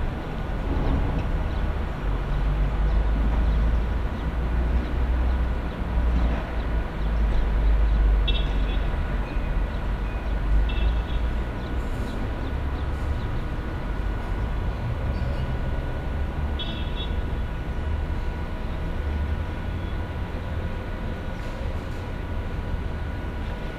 recorded with Hookie Audio Bluetooth binaural microphones, You hear construction work, street noise etc and sometimes the characteristic short horn blows from taxi drivers for getting attention of customers.